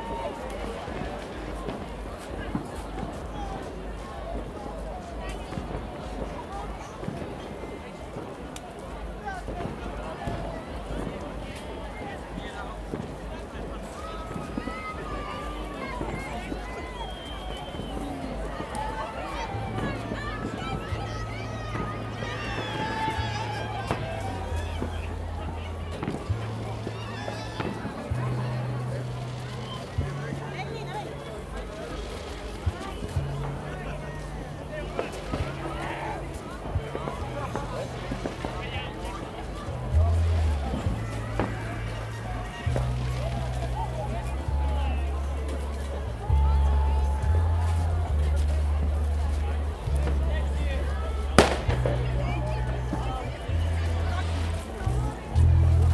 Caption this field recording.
Bassy dance music, fireworks, People counting from 3 to 1, cheers, laughter, partying (Zoom H2n, internal mics, MS-mode)